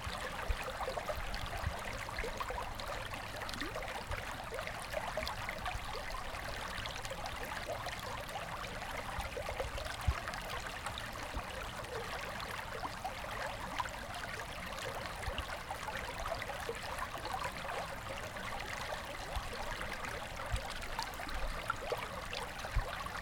Der Bach war an dieser Stelle Jahrzente verrohrt. Nun plätschert er wieder frei.
The creek was piped at this point decades. Now he splashes freely again.